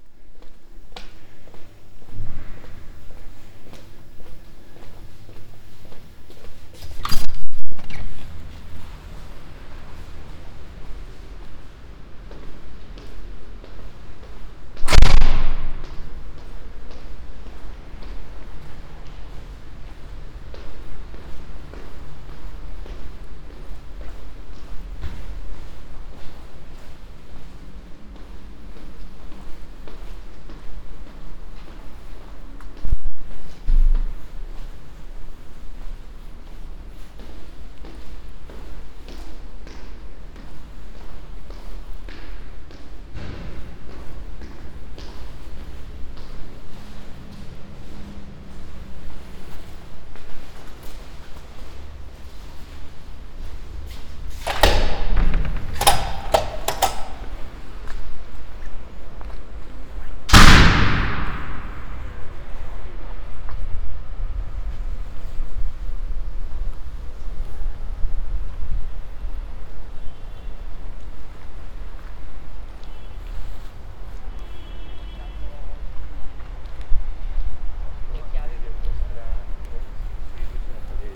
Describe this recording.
METS-Conservatorio Cuneo: 2019-2020 SME2 lesson1A, “Walking lesson SME2 in three steps: step A”: soundwalk, Thursday, October 1st 2020. A three step soundwalk in the frame of a SME2 lesson of Conservatorio di musica di Cuneo – METS department. Step A: start at 09:57 a.m. end at 10:14, duration of recording 17’29”, The entire path is associated with a synchronized GPS track recorded in the (kmz, kml, gpx) files downloadable here: